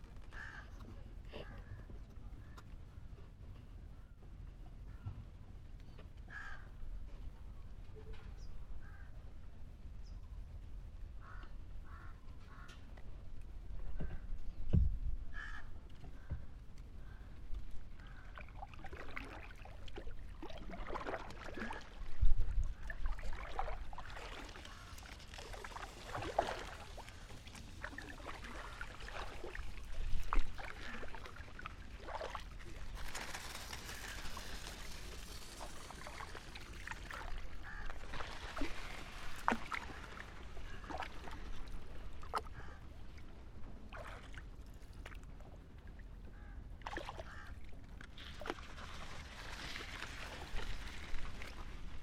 Kashi Art Residency, Khakkhaturret Island, Kerala, India